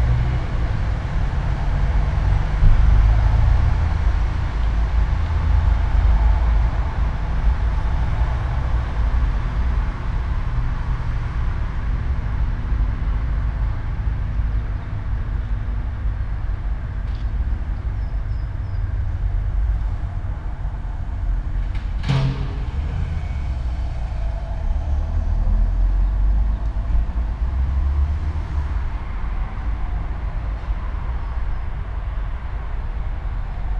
all the mornings of the ... - aug 23 2013 friday 08:22